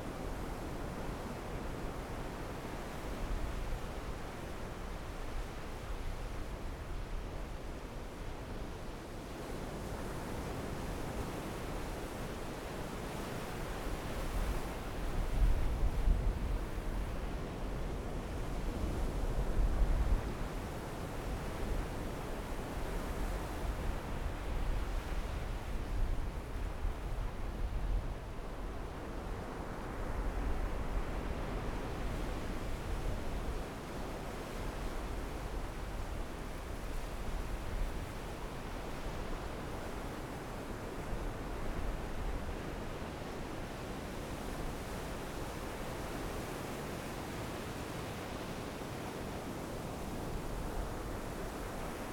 Taitung City, Taiwan - Sound of the waves
At the beach, Sound of the waves, Zoom H6 M/S, Rode NT4
Taitung County, Taiwan, 15 January